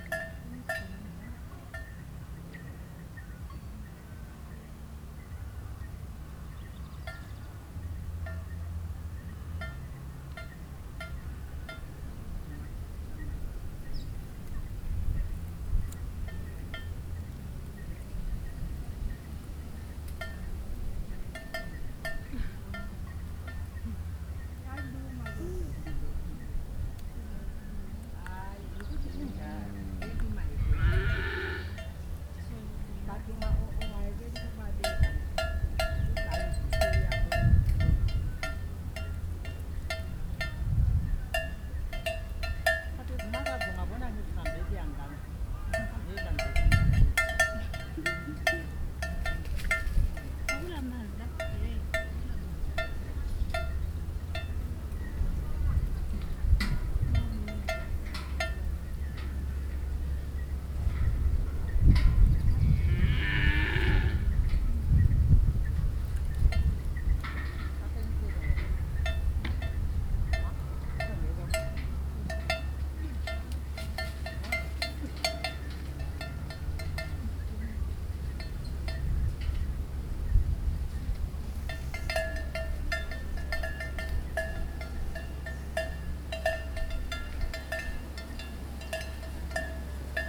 Lupane, Zimbabwe - On the way to the village borehole…
I’m joining Thembi and her sister fetching water from the local borehole. We walk for about 20 minutes through the bush before reaching the borehole….
The recordings are archived at: